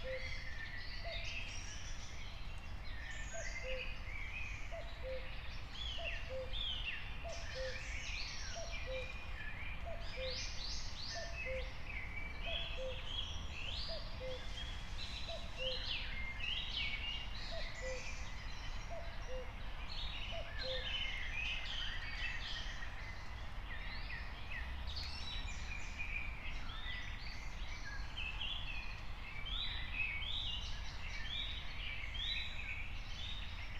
03:45 Berlin, Wuhletal - wetland / forest ambience
14 June 2021, 03:45